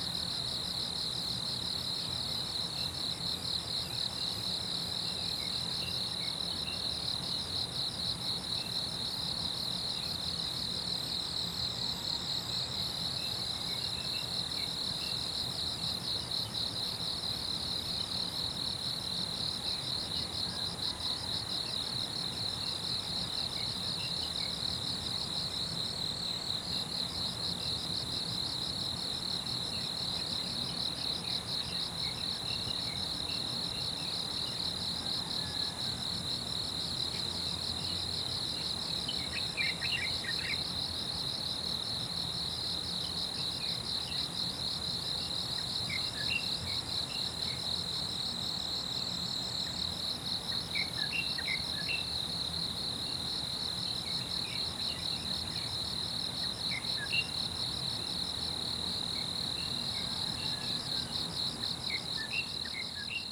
水上巷桃米里, Puli Township - In the grass
Early morning, Bird sounds, Insect sounds, In the grass, Chicken sounds
Zoom H2n MS+XY
Nantou County, Puli Township, 水上巷, June 2016